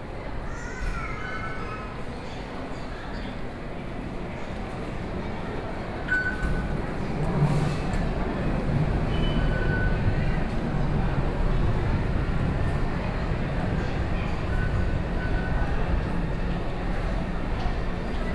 in the MRT Station, Sony PCM D50 + Soundman OKM II